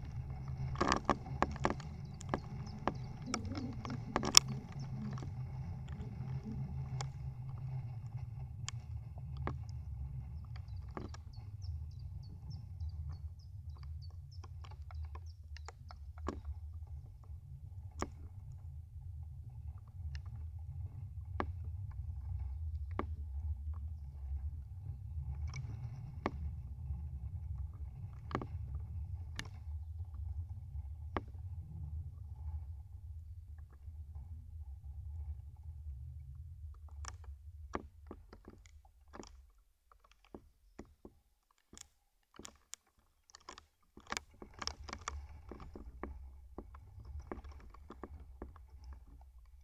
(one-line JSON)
{"title": "Lithuania, Narkunai, dried wisp in wind", "date": "2012-04-24 15:50:00", "description": "recorded with contact microphone", "latitude": "55.47", "longitude": "25.55", "altitude": "126", "timezone": "Europe/Vilnius"}